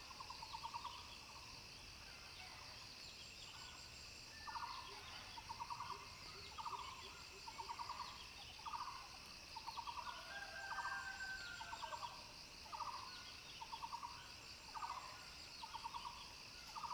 TaoMi Village, Puli Township - In the morning
Frogs sound, Bird calls
Zoom H2n MS+XY